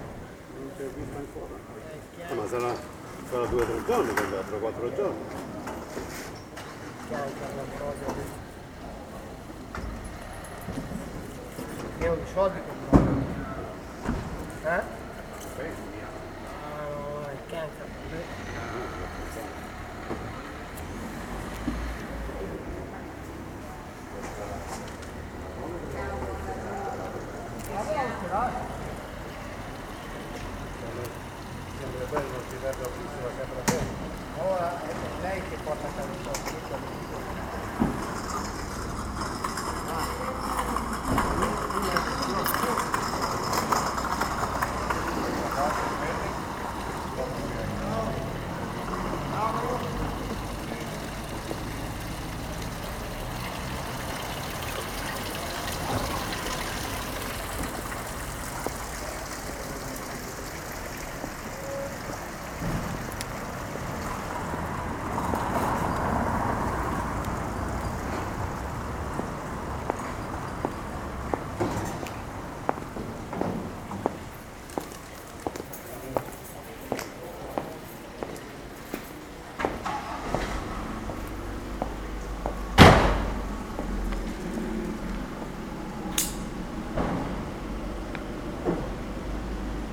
Asola MN, Italy - closing of the open air market square
the sellers are closing the market, packing up their moving booths and putting goods into their vans before leaving. some are sellings last vegetables, some others talking each other before going home
Asola, Mantova, Italy, 24 October 2012, 14:30